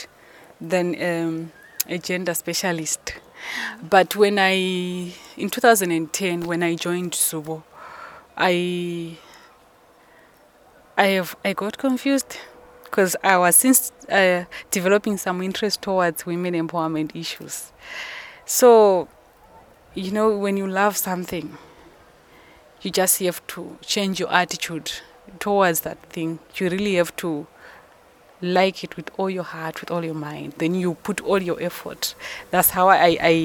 Office of Basilwizi Trust, Binga, Zimbabwe - Abbigal Muleya - they teach me what i don't know...
Abbigal describes her work with rural women, the partnership she experiences “they teach me what I don’t know, and I teach them what they don’t know”… and she relates how she re-tells information she has gathered in online research to the local crafts women so that together with them, and based on their knowledge and skills, new products and new ways of production can be developed …
The recordings with Abbigal are archived here: